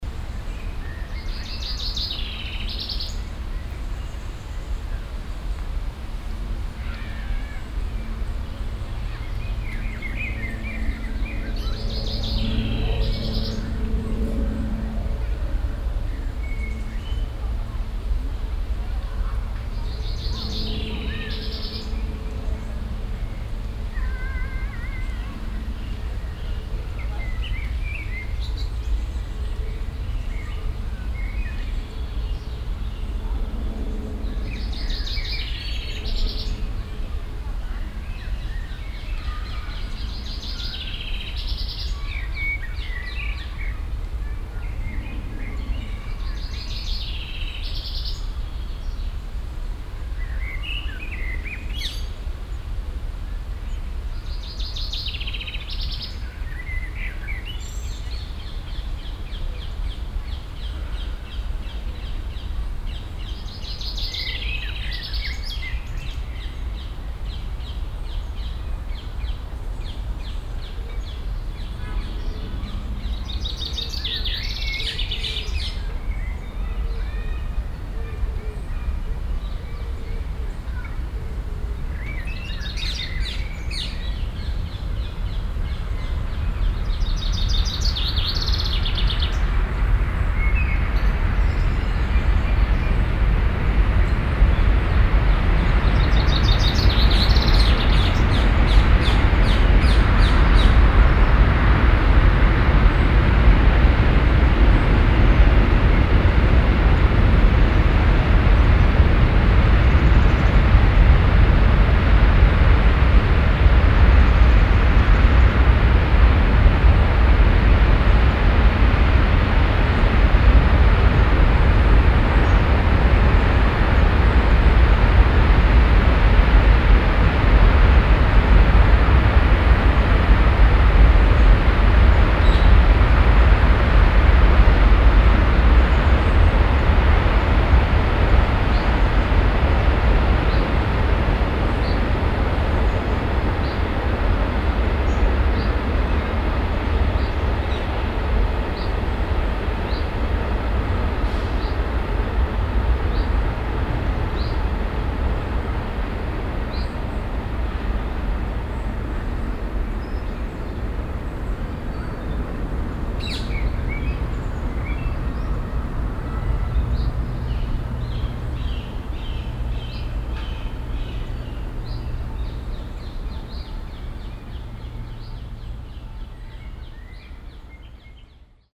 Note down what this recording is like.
unter schnur baum - stereofeldaufnahmen, im juni 08 - nachmittags, project: klang raum garten/ sound in public spaces - in & outdoor nearfield recordings